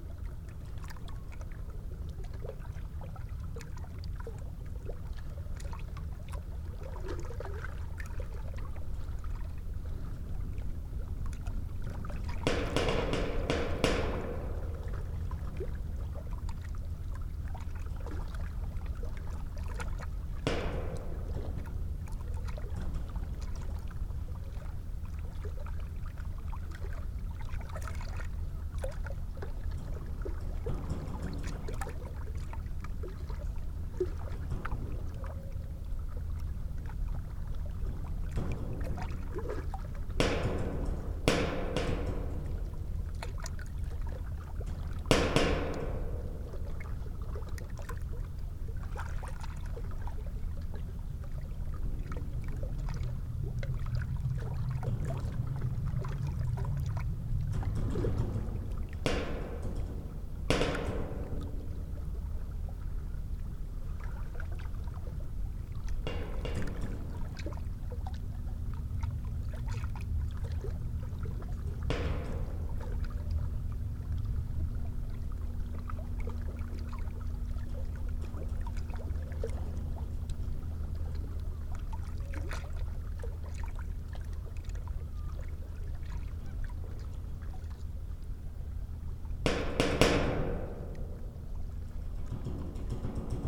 Inside the Saemangeum seawall, a 7ft steel sheet wall runs beside new roading into the tidal wetland.
Jeollabuk-do, South Korea, 4 May 2017, 05:15